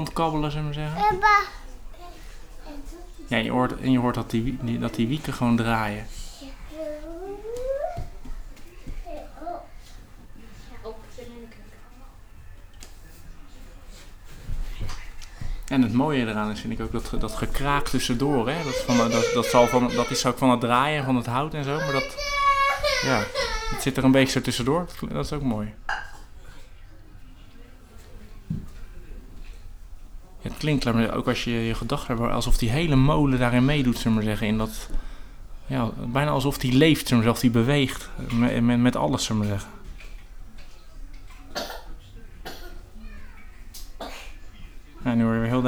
gesprek met Joost over geluiden van de molen en de Stevenshof
Joost over de geluiden van de Stevenshof
talking about the sounds of the Stevenshof
Leiden, The Netherlands